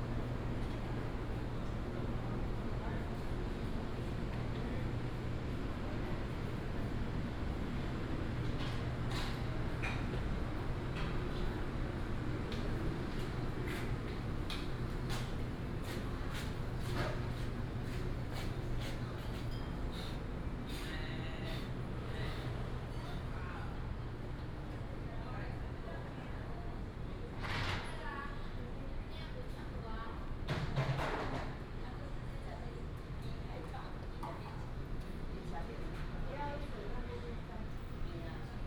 {"title": "雲林溪美食廣場, Douliu City - food court", "date": "2017-03-01 17:22:00", "description": "food court\nBinaural recordings\nSony PCM D100+ Soundman OKM II", "latitude": "23.71", "longitude": "120.54", "altitude": "51", "timezone": "Asia/Taipei"}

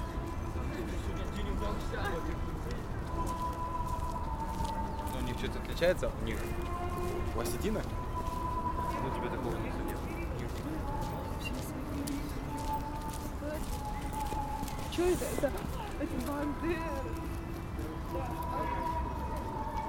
{"title": "Mihaylovsky garden, Saint-Petersburg, Russia - Mihaylovsky garden. Church service near Savior on Blood", "date": "2015-03-15 18:36:00", "description": "SPb Sound Map project\nRecording from SPb Sound Museum collection", "latitude": "59.94", "longitude": "30.33", "altitude": "15", "timezone": "Europe/Moscow"}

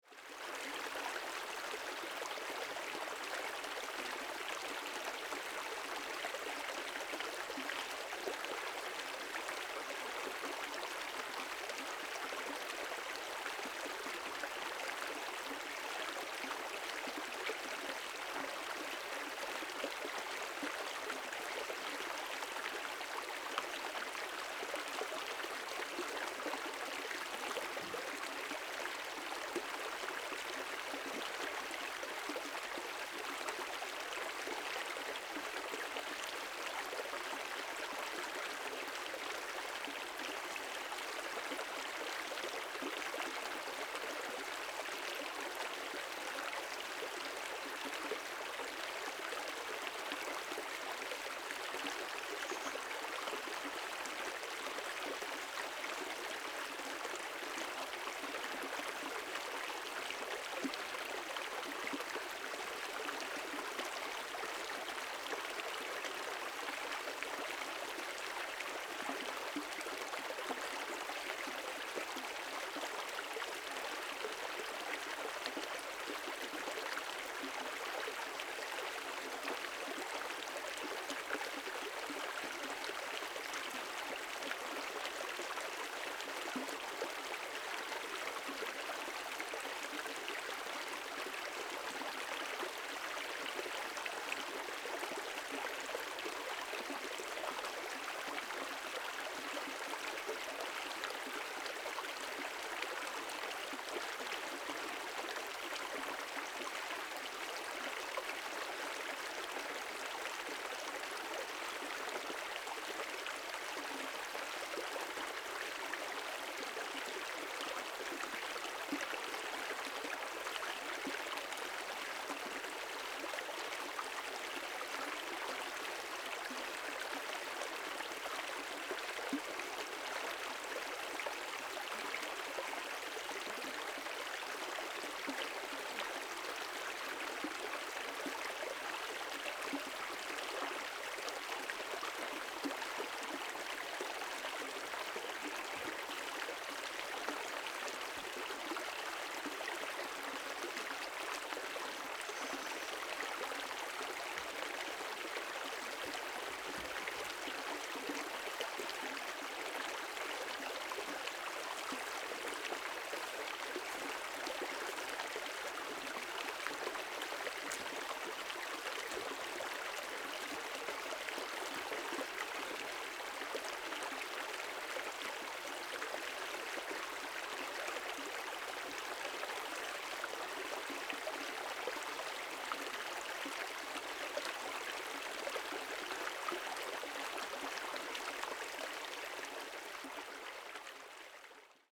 {"title": "成功里, 埔里鎮, Nantou County - Stream", "date": "2016-04-20 15:43:00", "description": "Brook, Stream sound\nZoom H6 XY", "latitude": "23.96", "longitude": "120.89", "altitude": "464", "timezone": "Asia/Taipei"}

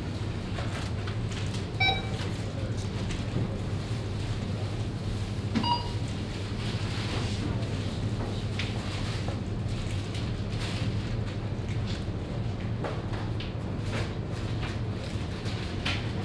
{"title": "Old Town, Klausenburg, Rumänien - Cluj-Napoca - Centre Commercial Central - supermarktet checkout counter", "date": "2014-03-03 11:10:00", "description": "At the check out counter of the supermarket inside the shopping mall.\nThe sound and two note rhythm melody of two check points and customer conversation.\nsoundmap Cluj- topographic field recordings and social ambiences", "latitude": "46.77", "longitude": "23.59", "timezone": "Europe/Bucharest"}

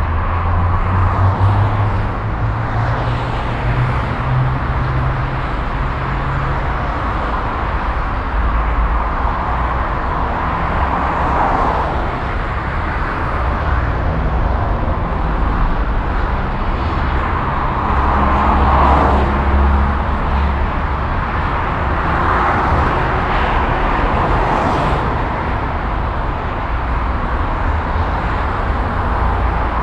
{"title": "Rüttenscheid, Essen, Deutschland - essen, highway bridge, A52", "date": "2014-04-12 09:10:00", "description": "Auf einer Brücke über die A52 an der Stadtausfahrt Essen. Das Rauschen des Verkehrs, der Klang des unterschiedlichen Motoren.\nOn a bridge over the highway A52 at a city exit. The sound of the traffic and the different motor types.\nProjekt - Stadtklang//: Hörorte - topographic field recordings and social ambiences", "latitude": "51.42", "longitude": "7.00", "altitude": "119", "timezone": "Europe/Berlin"}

